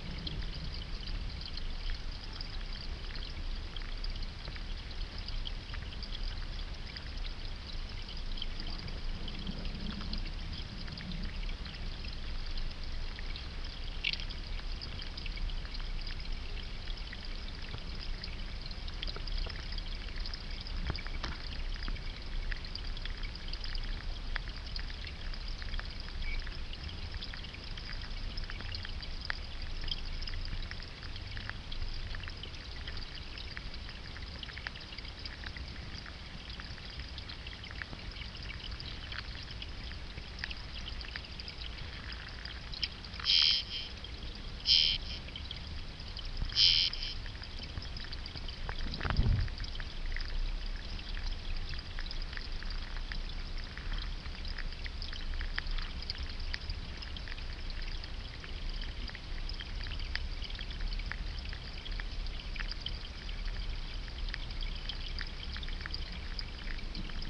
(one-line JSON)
{"title": "Waterway, Ham Wall Nature Reserve", "date": "2011-11-19 18:41:00", "description": "Water, Hydrophone, wetlands, underwater, fish, eel, plants", "latitude": "51.15", "longitude": "-2.77", "altitude": "1", "timezone": "Europe/London"}